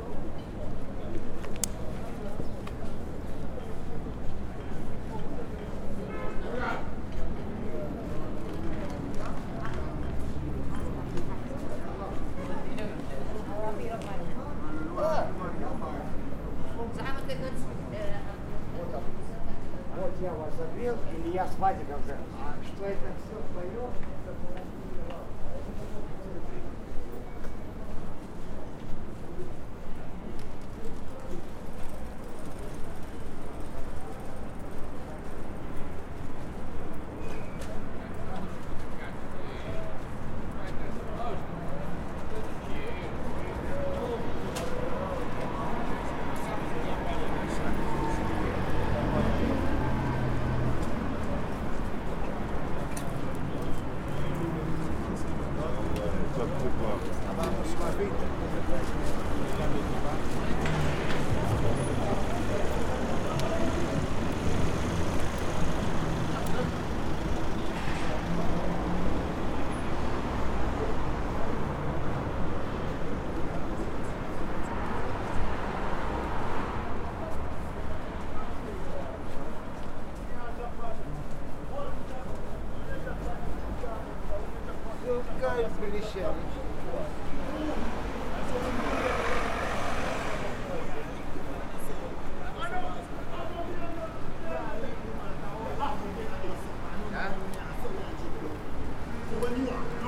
Hauptbahnhof, Frankfurt am Main, Deutschland - Street with voices
A recording in front of the station, voices in different languages are heard.